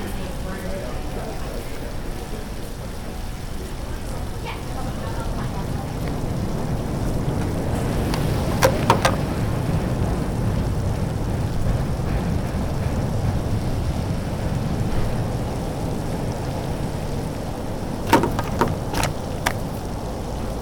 The Loop, Chicago, IL, USA - Skateboarding on Michigan ave.
Wandering down Michigan avenue with my skateboard on a grey Chicago afternoon.